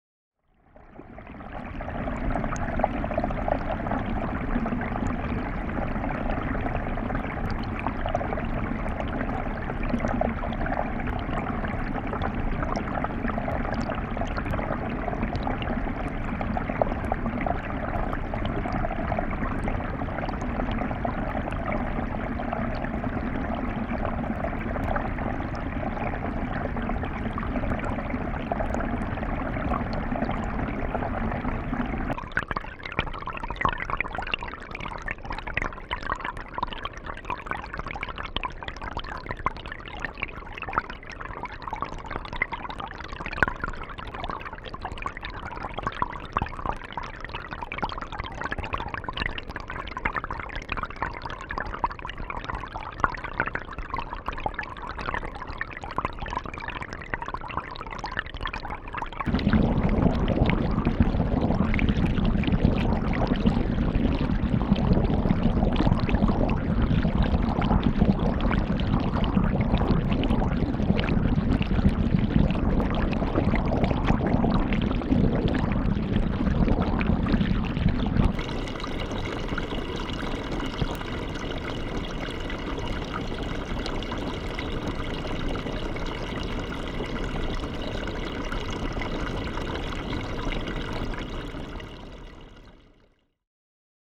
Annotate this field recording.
Hydrophone moves about a weir. Walking Holme